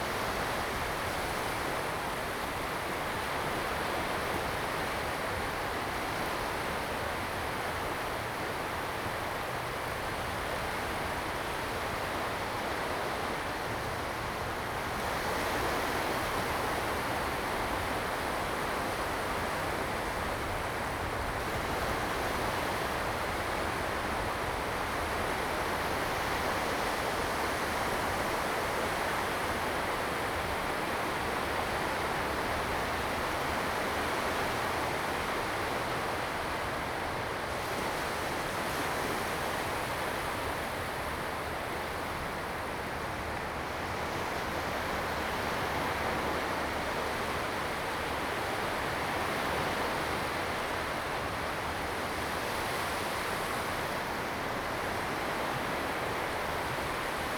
At the beach, the waves
Zoom H2n MS+XY